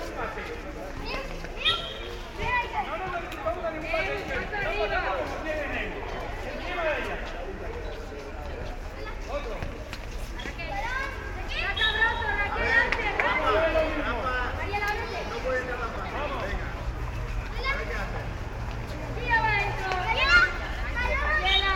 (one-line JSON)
{"title": "Colonia Juan XXIII, Alicante, Spain - (06 BI) School Playground", "date": "2016-11-03 18:50:00", "description": "Binaural recording of a school playground at Colonia San Juan XXIII.\nRecorded with Soundman OKM on Zoom H2n.", "latitude": "38.37", "longitude": "-0.48", "altitude": "88", "timezone": "Europe/Madrid"}